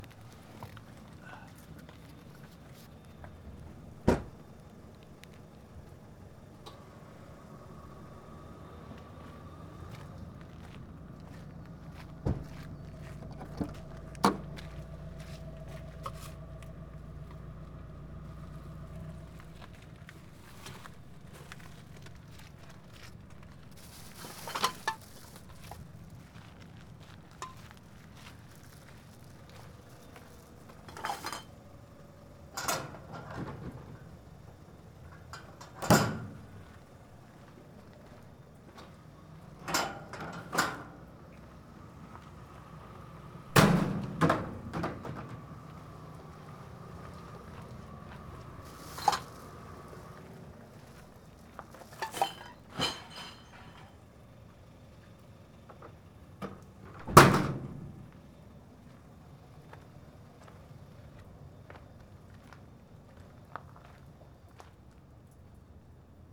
City of Chicago recycling bins - recycling on World Listening Day
World Listening Day, taking bags of recyclable waste out of car, tossing into large city dumpsters near Chicago Center for Green Technology, WLD
Chicago, IL, USA